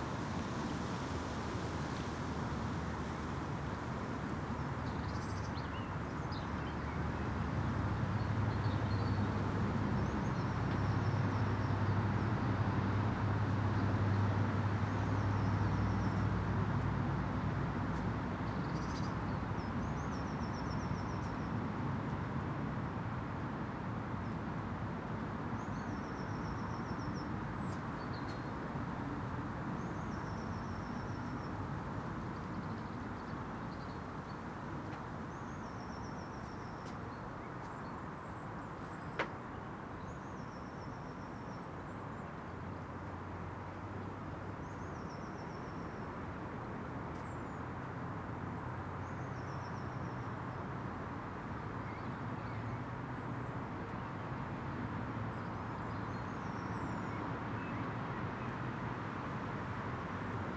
Contención Island Day 77 inner southwest - Walking to the sounds of Contención Island Day 77 Monday March 22nd
The Drive Moor Crescent High Street
Baskets bulging the honeybees are dusted yellow with willow pollen
In the dip road sounds pass above me a robin sings
The ground is wet mud and puddles from rain and melting snow